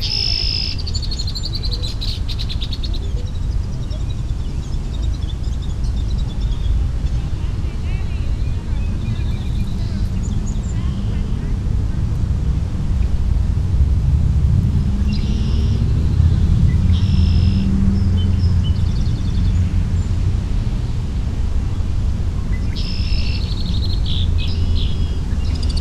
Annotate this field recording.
Recorded with Usi Pro at Parc Jarry with Zoom F3